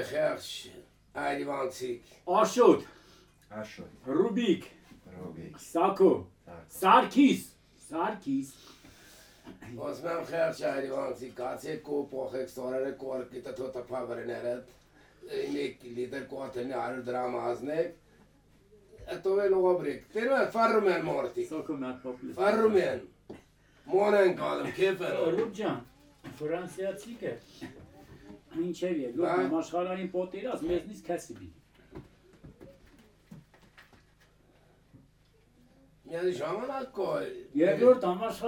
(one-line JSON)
{"title": "Kotayk, Arménie - Sharing yogurt in the yurt", "date": "2018-09-05 09:15:00", "description": "While walking near the volcanoes, some farmers went to see us and said : come into the tent during a few minutes. They are extremely poor, but welcomed us, and gave a very strong yogurt called tan, and the coffee called sourj. This recording is the time we spent in the tent. It's the simple sound of their life in mountains.", "latitude": "40.39", "longitude": "44.92", "altitude": "2699", "timezone": "Asia/Yerevan"}